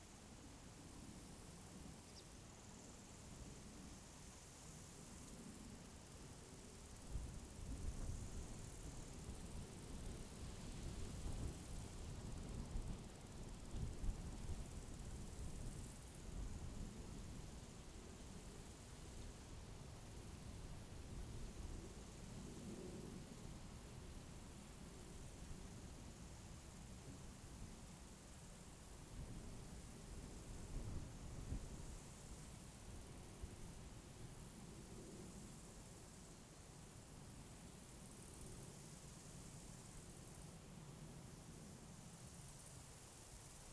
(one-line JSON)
{"title": "Unnamed Road, Žalany, Česko - Field near Milešovka hill", "date": "2019-07-30 13:12:00", "description": "Summer field sounds. Birds, grasshoppers, wind blows. Airplane passes over.\nZoom H2n, 2CH, handheld.", "latitude": "50.56", "longitude": "13.93", "altitude": "543", "timezone": "Europe/Prague"}